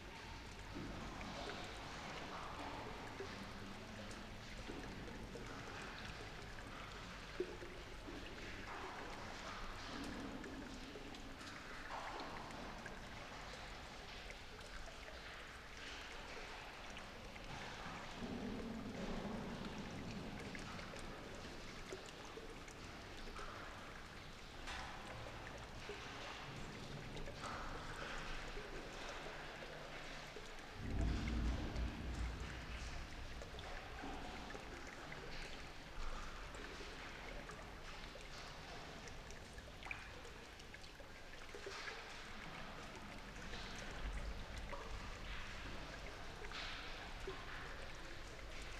La Coupole Intérieur Helfaut, France - La Coupole - Intérieur
France métropolitaine, France, 16 February 2022, ~16:00